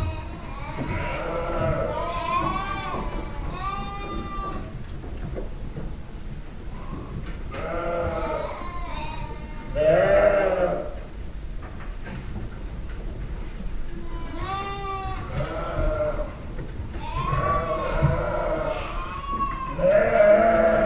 Ispagnac, France - The sheephorn
Henceforth, habitual sheep yellings, because of the missing lambs.
4 March 2015